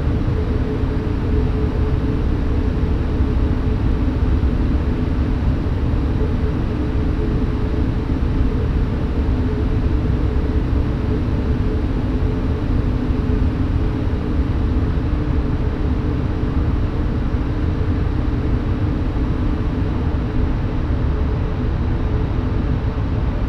ratingen west - tennishalle
lüfung und schallresonanzen ein einer aufblasbaren tennishalle
soundmap nrw:
social ambiences/ listen to the people - in & outdoor nearfield recordings